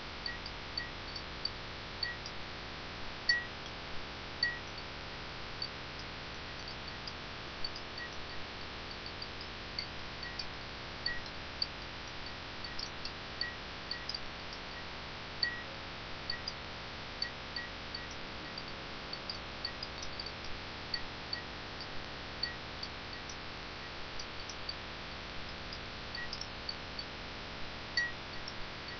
Flat in Guting, the Buzzing Fluorescent Light
6 May 2009